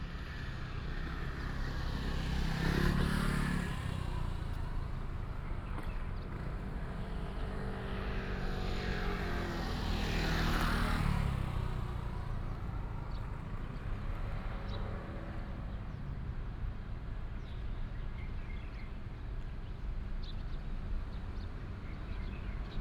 next to the old community after the demolition of the open space Bird call, traffic sound, Binaural recordings, Sony PCM D100+ Soundman OKM II